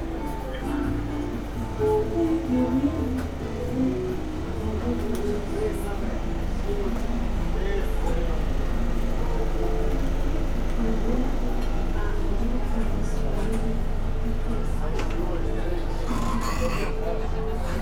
sea room, Novigrad, Croatia - hot nights
terrace band plays bessame song, restaurant aeration device in the anteroom runs in full power, built in closet wants to sing lullaby ...
18 July 2015